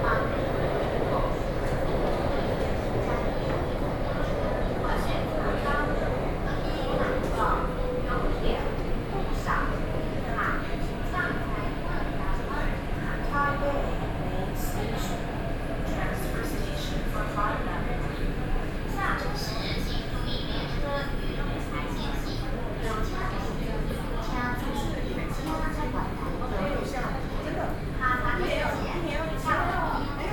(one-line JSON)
{"title": "Taipei, Taiwan - in the MRT train", "date": "2012-10-28 16:26:00", "latitude": "25.04", "longitude": "121.52", "altitude": "20", "timezone": "Asia/Taipei"}